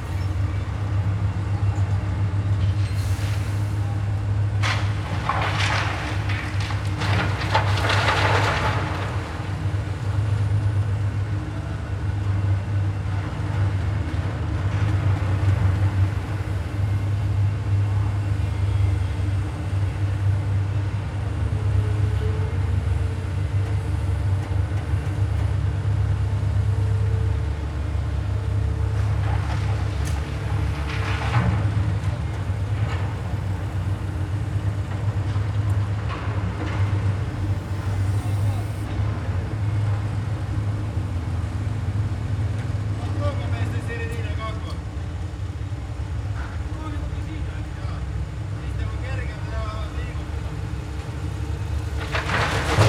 heavy construction work at sea plane hangar